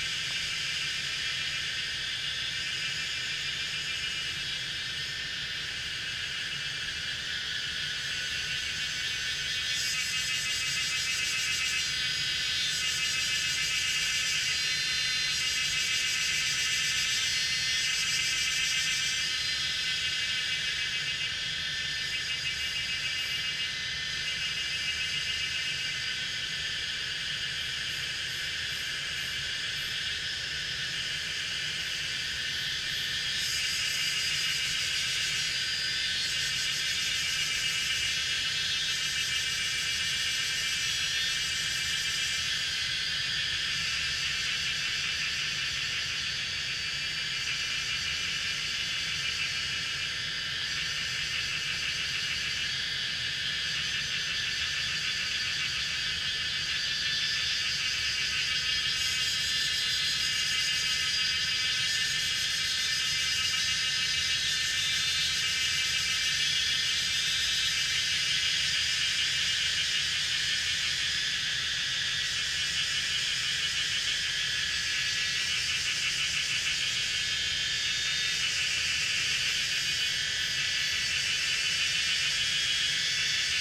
水上, 桃米里, Puli Township - Cicadas sound
In the woods, Cicadas sound
Zoom H2n MS+XY
Puli Township, 水上巷